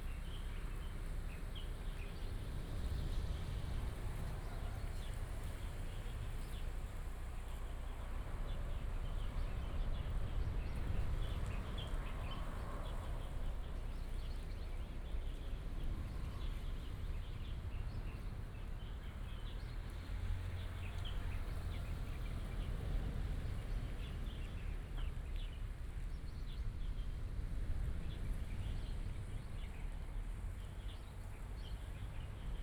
Qianxi St., Taimali Township, Taitung County - Morning at the seaside
at the seaside, Bird song, Sound of the waves, Chicken cry, Traffic sound
15 March 2018, 06:51